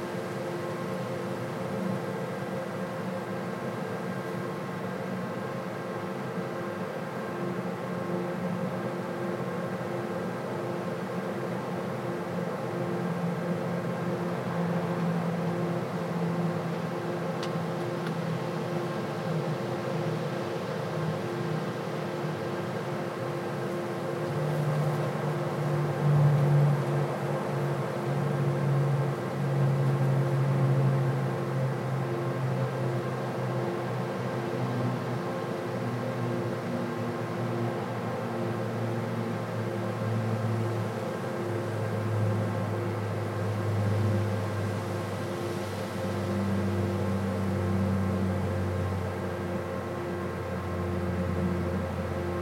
Neringos Lighthouse, Lithuania - Lighthouse Window
Recordist: Saso Puckovski. Close to the lighthouse maintenance room window. Random tourists walking around. Recorded with ZOOM H2N Handy Recorder.